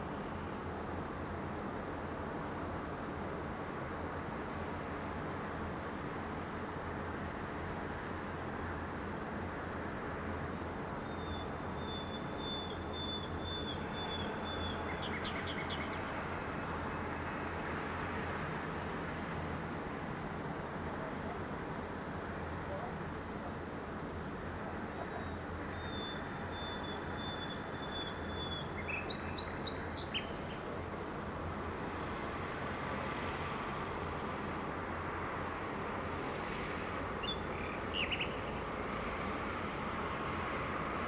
Nightingale voice audible through traffic noise reflects on scene composed of concrete buildings sorrounding sports field. Recorded from 9th floor. Recorder Olympus LS11.